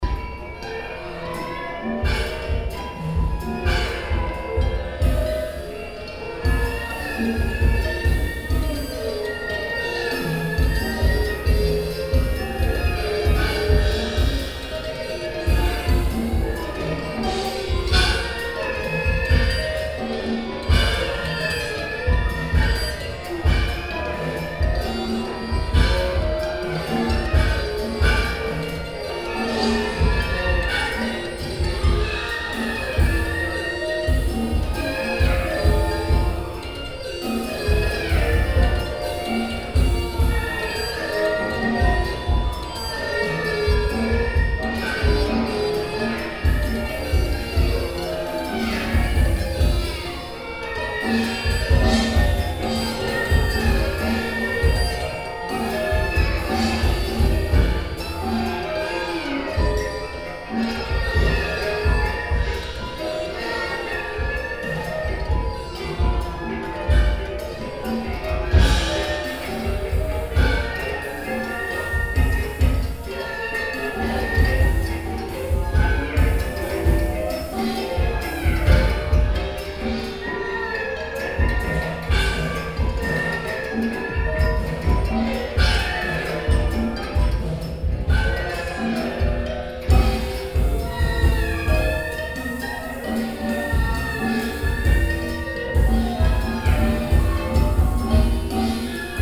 cologne, alter wartesaal, trip clubbing concert
inside the concert hall of the alte wartesaal - a concert of the zeitkratzer ensemble performing music by marcus popp/ oval within the concert series trip clubbing
soundmap nrw - social ambiences and topographic field recordings
9 May 2010